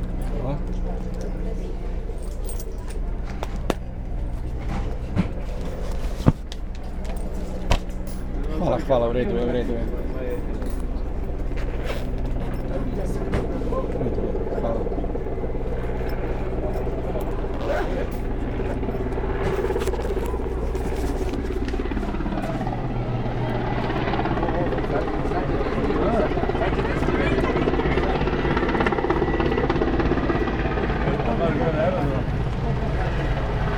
Island Hvar, helicopters above Stari Grad - low flight
poeple in the street and cafes, crickets, helicopters